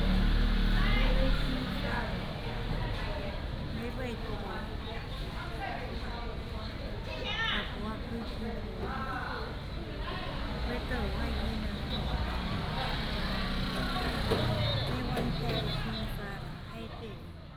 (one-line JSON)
{"title": "白沙尾觀光港, Hsiao Liouciou Island - Old people are selling seafood", "date": "2014-11-02 09:53:00", "description": "Visitor Center, Old people are selling seafood", "latitude": "22.35", "longitude": "120.38", "altitude": "10", "timezone": "Asia/Taipei"}